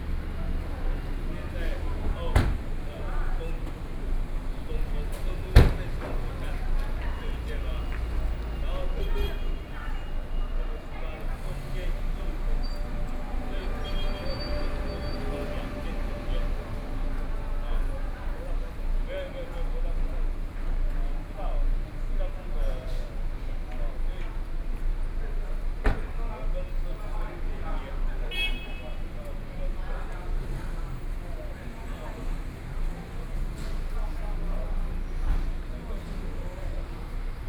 Station exit, Zoom H4n + Soundman OKM II
Miaoli County, Taiwan